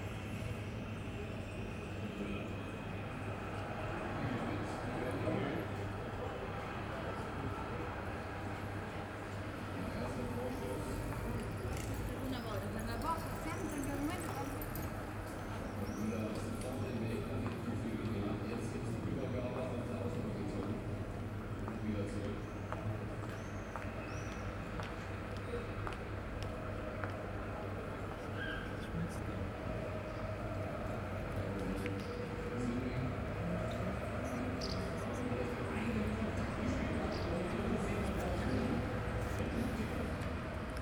street ambience in front of pub, during the european soccer championship
Berlin, Germany